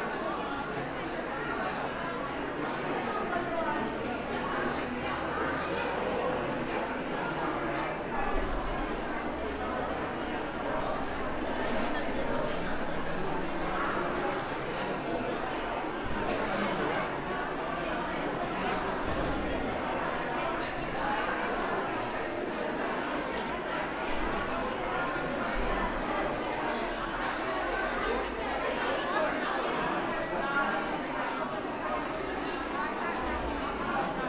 Altes Gymnasium, Oldenburg, Deutschland - entrance hall ambience
entrance, main hall, school starts after summer holidays, ambience
(Sony PCM D50, Primo EM172)
Oldenburg, Germany, August 2018